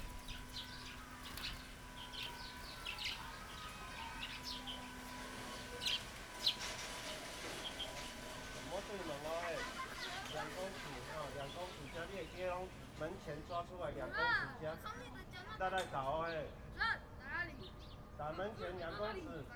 Houliao Elementary School, Fangyuan Township - Environmental sounds
Class voice, Aircraft flying through, Practice playing croquet, Birdsong, Distant machine noise, Zoom H6
Changhua County, Fangyuan Township, 頂芳巷, January 2014